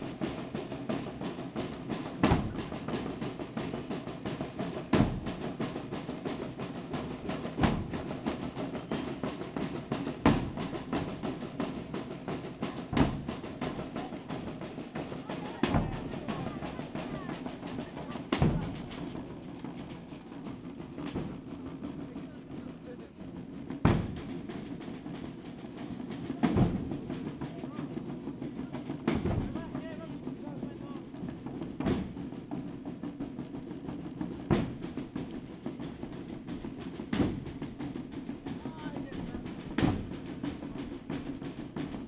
another cheaper street percussion band